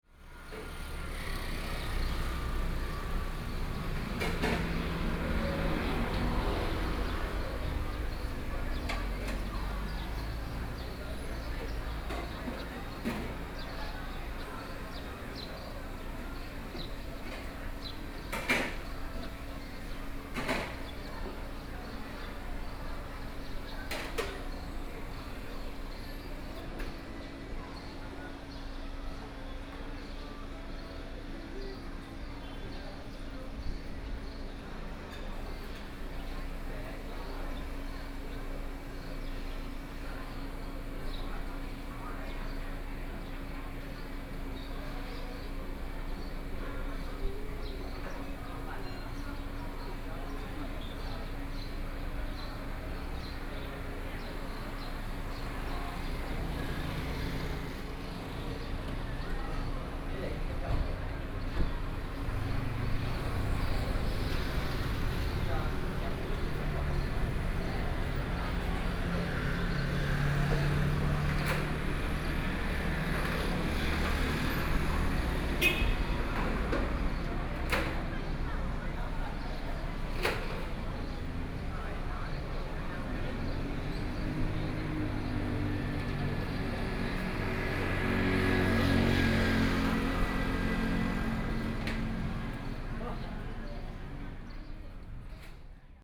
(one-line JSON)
{"title": "Binhai 1st Rd., Gushan Dist. - At the intersection", "date": "2014-05-14 13:59:00", "description": "At the intersection, Very hot weather, Traffic Sound", "latitude": "22.62", "longitude": "120.27", "altitude": "8", "timezone": "Asia/Taipei"}